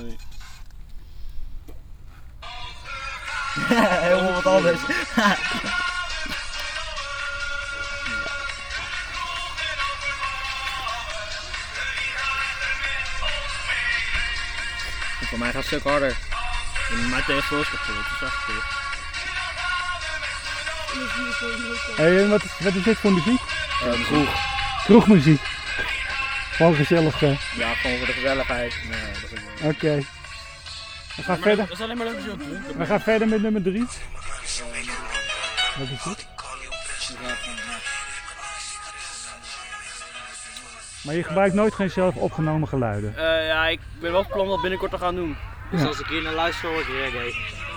tieners laten hun muziekkeuze (via mobieltje) horen.
talk with teenagers about their choise of music (on their mobilephones)
Leiden, The Netherlands, 3 September 2011